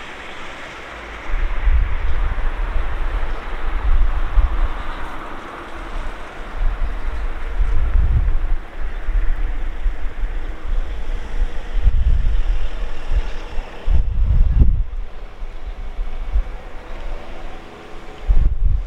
Viseu, fifth floor H013.30